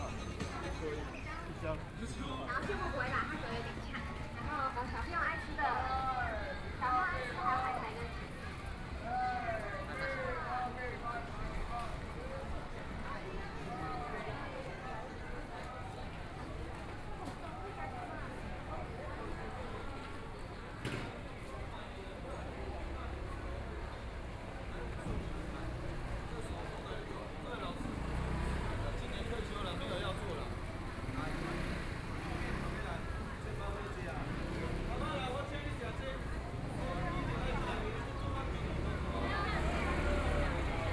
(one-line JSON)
{"title": "Gongming St., New Taipei City - walking in the Street", "date": "2013-11-17 11:29:00", "description": "Walking through the mall during holidays, Tourists from all over, Binaural recordings, Zoom H6+ Soundman OKM II", "latitude": "25.17", "longitude": "121.44", "altitude": "7", "timezone": "Asia/Taipei"}